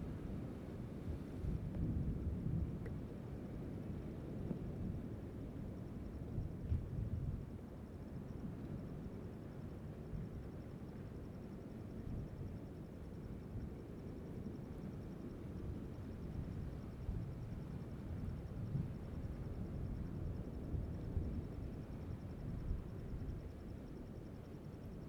烏石鼻, Taiwan - Thunder
sound of the waves, Thunder
Zoom H2n MS+XY